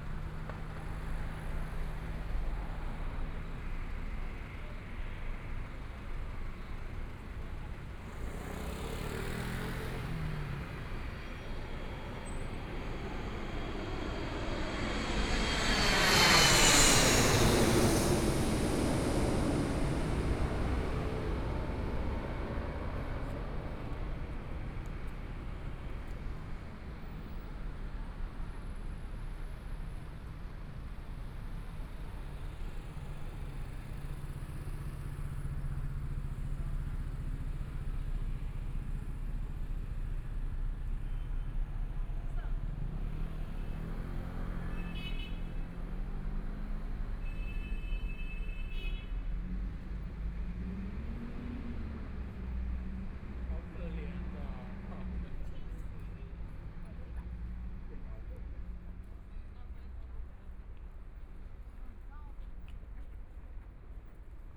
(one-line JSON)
{"title": "Arts Park - Taipei EXPO Park - Walking through the park", "date": "2014-02-16 20:55:00", "description": "Walking through the park, Many tourists, Aircraft flying through, Traffic Sound\nBinaural recordings, Please turn up the volume a little\nZoom H4n+ Soundman OKM II", "latitude": "25.07", "longitude": "121.53", "timezone": "Asia/Taipei"}